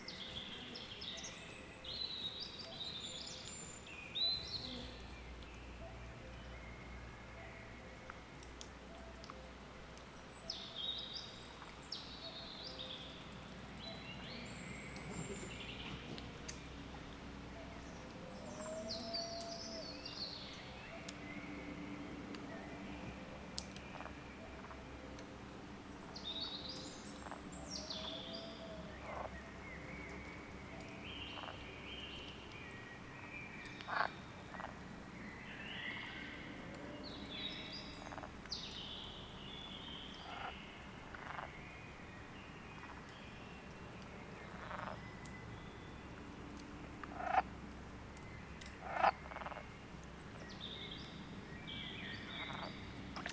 {"title": "pond soundscape at the forest house", "date": "2010-06-27 22:24:00", "latitude": "58.20", "longitude": "27.35", "altitude": "46", "timezone": "Europe/Tallinn"}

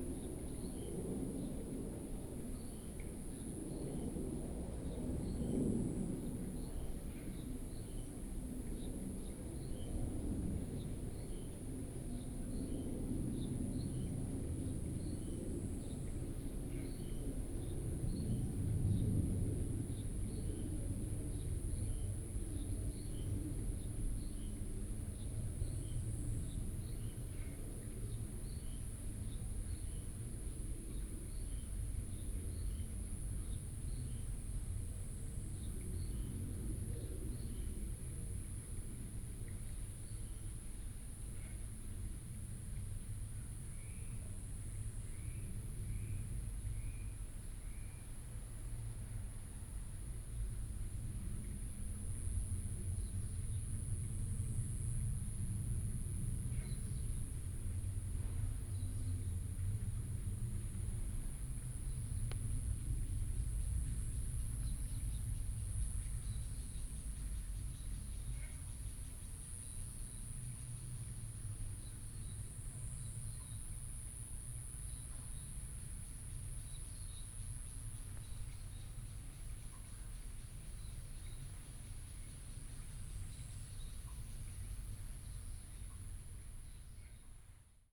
{"title": "復興區壽山宮, Taoyuan City - Small countryside", "date": "2017-08-10 15:38:00", "description": "Bird call, Small countryside, The plane flew through, Frog", "latitude": "24.80", "longitude": "121.37", "altitude": "311", "timezone": "Asia/Taipei"}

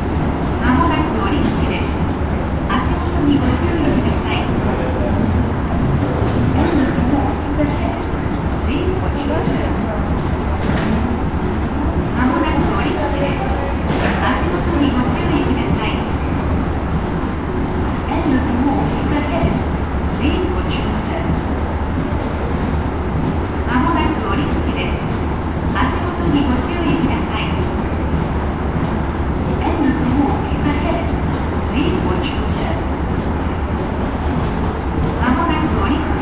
{"title": "古込 Narita, Chiba Prefecture, Japonia - fast lane", "date": "2018-09-20 09:21:00", "description": "fast lane warning indicating the end of the walk (roland r-07)", "latitude": "35.77", "longitude": "140.39", "altitude": "40", "timezone": "Asia/Tokyo"}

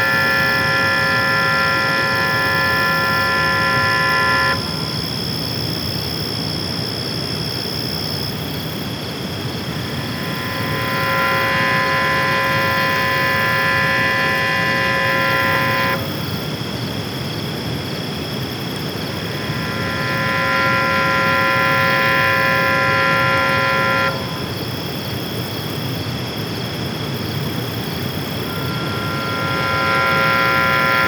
{"title": "Lakeshore Ave, Toronto, ON, Canada - Mystery alarm?", "date": "2019-08-29 21:07:00", "description": "Mysterious alarm-like sound emanating from a water processing plant. Also crickets and waves.", "latitude": "43.61", "longitude": "-79.38", "timezone": "GMT+1"}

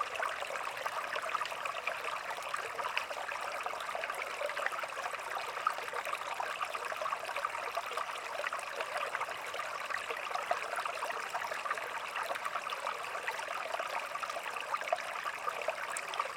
{"title": "Campo de Geres, Portugal - Stream - Stream - Campo Geres", "date": "2018-09-03 11:15:00", "description": "Small stream running, recorded with a SD mixpre6 and 2 Primo 172 omni mics in AB stereo configuration.", "latitude": "41.75", "longitude": "-8.20", "altitude": "617", "timezone": "GMT+1"}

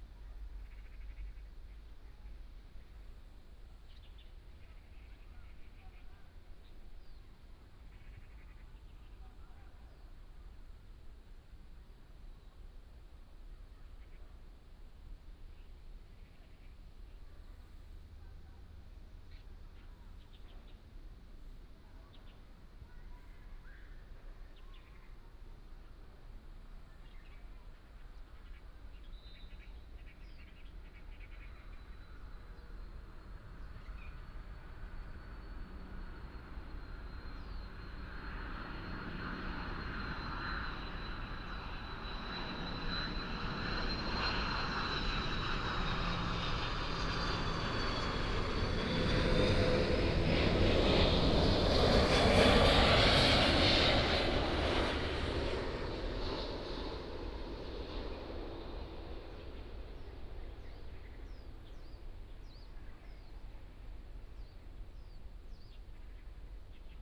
{"title": "Zhonghua Rd., Dayuan Dist., Taoyuan City - Landing", "date": "2017-08-18 15:43:00", "description": "Landing, birds sound, traffic sound, Near the airport", "latitude": "25.07", "longitude": "121.21", "altitude": "24", "timezone": "Asia/Taipei"}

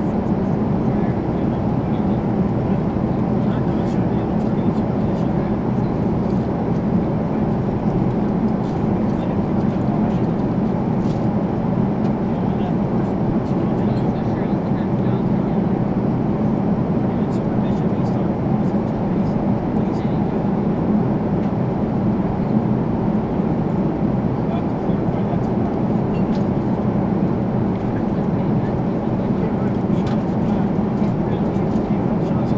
{"title": "neoscenes: in plane over Kansas", "latitude": "39.10", "longitude": "-100.13", "altitude": "780", "timezone": "GMT+1"}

